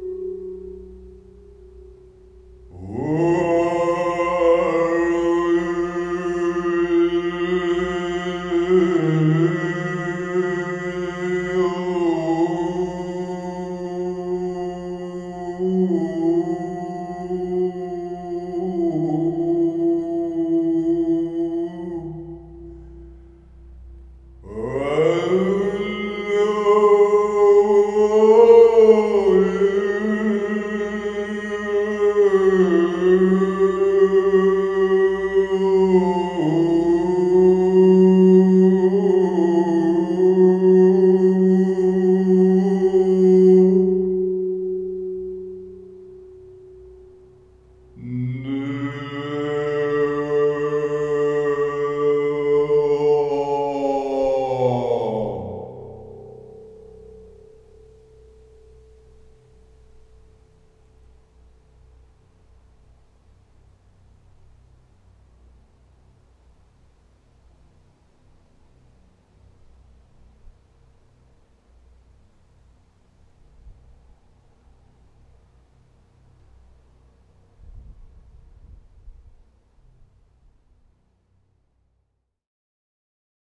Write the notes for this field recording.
Kaunas botanical garden. Some kind of big round empty sculpture with great echo inside...I tried to sing...